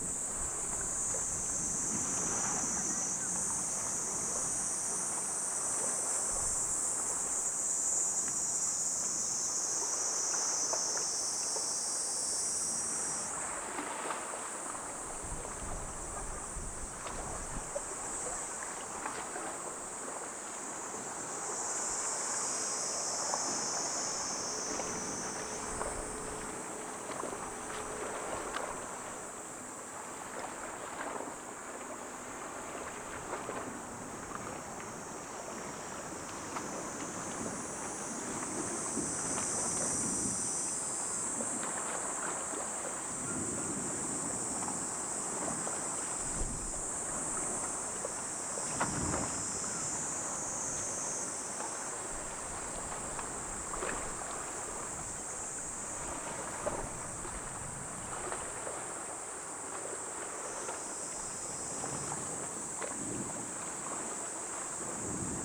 Stones statues of fox and mysterious scenery.
Marantz PMD661MKII recorder with microphone ST M/S AKG Blue line CK 94 and Sennheiser mkh 416 p48
中国地方, 日本, 30 July